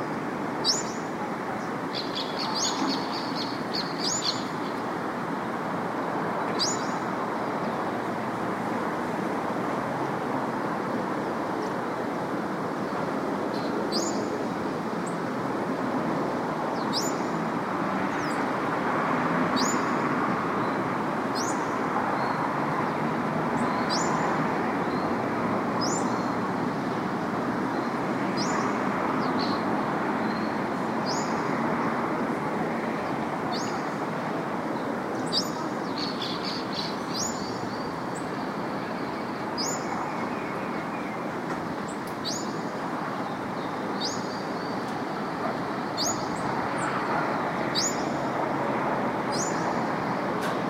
SQN 303 - Bloco F - Brasília, Brazil - AQN 303 - Bloco F - Brasília, Brazil
ambient sound in SQN 303 - Brasília, Brazil - WLD
Brazilian Federal District, Brazil, 18 July, 09:30